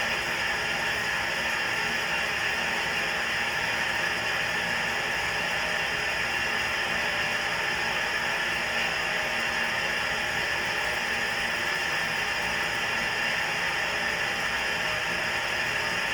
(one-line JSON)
{"title": "SBG, Cami de Vilanova - Molino en funcionamiento", "date": "2011-07-18 17:45:00", "description": "Un viejo molino usado para moler el grano, escuchado a tráves de su propia estructura con micrófonos de contacto. WLD", "latitude": "41.98", "longitude": "2.17", "altitude": "864", "timezone": "Europe/Madrid"}